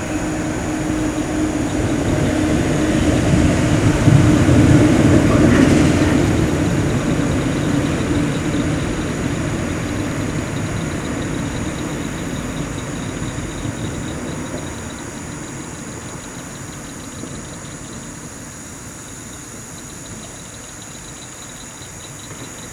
Recorded with a Maranrtz PMD661 and a pair of DPA 4060s
Austin, TX, USA, August 2015